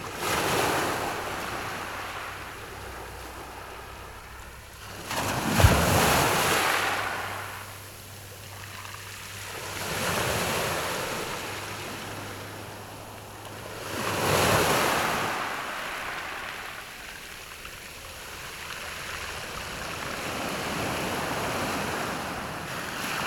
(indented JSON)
{
  "title": "內埤灣, Su'ao Township - sound of the waves",
  "date": "2014-07-28 15:20:00",
  "description": "Sound of the waves, At the beach\nZoom H6 MS+ Rode NT4",
  "latitude": "24.58",
  "longitude": "121.87",
  "altitude": "7",
  "timezone": "Asia/Taipei"
}